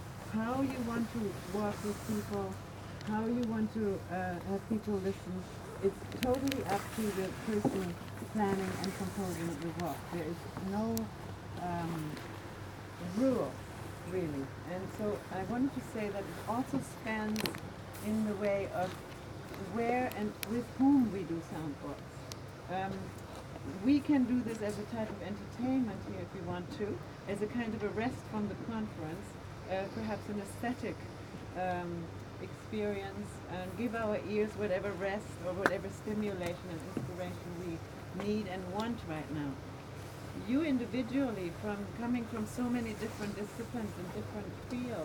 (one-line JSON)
{"title": "soundwalk, Koli, Finland, Suomi, Suomen tasavalta - soundwalk, Koli", "date": "2010-06-18 12:30:00", "description": "Koli, soundwalk, introduction, ideas, words, Finland, WFAE, Hildegard Westerkamp, Suomi", "latitude": "63.10", "longitude": "29.82", "altitude": "91", "timezone": "Europe/Helsinki"}